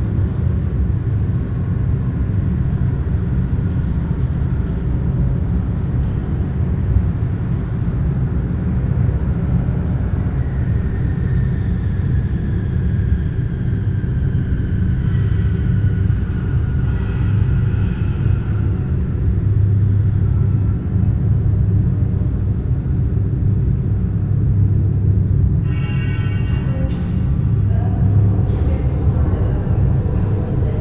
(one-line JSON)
{"title": "cologne, hahnenstrasse, kölnischer kunstverein, foyer", "date": "2008-06-04 12:03:00", "description": "soundmap: köln/ nrw\naufnahme von resonanzen einer klanginstallation von Mark Leckey im foyer des Kölnischen kunstvereins\nproject: social ambiences/ listen to the people - in & outdoor nearfield recordings", "latitude": "50.94", "longitude": "6.94", "altitude": "55", "timezone": "Europe/Berlin"}